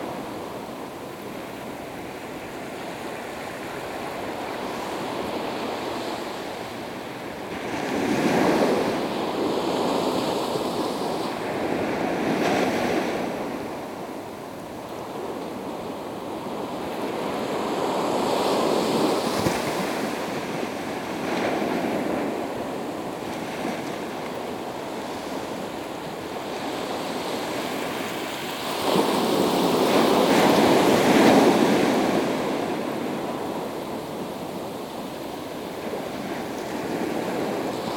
Kijkduin, Nederlands - The sea
Kijkduin, the sea at Zuiderstrand
Den Haag, Netherlands, March 2019